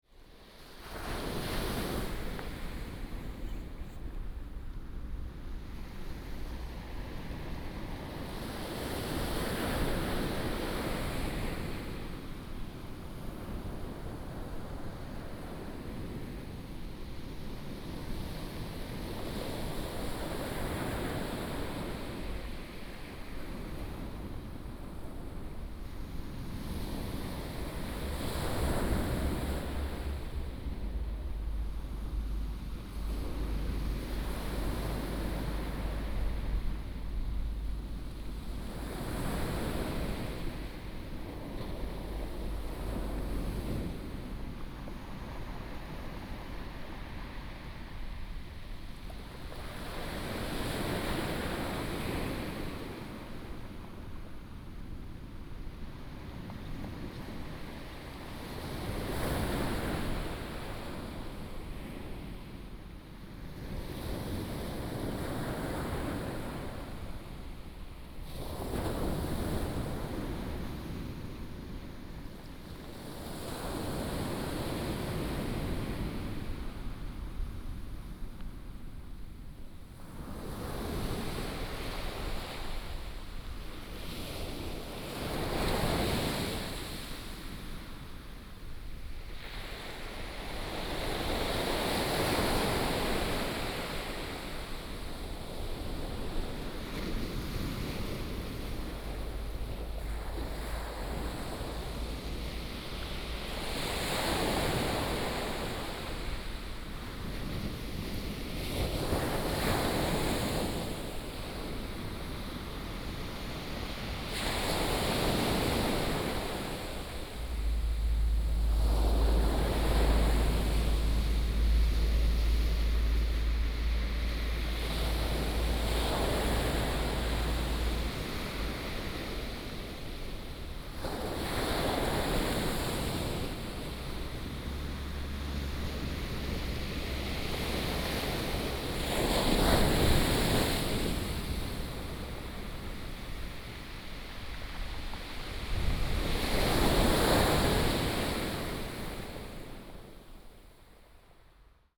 Sound of the waves, traffic sound, Close to the wave
Binaural recordings, Sony PCM D100+ Soundman OKM II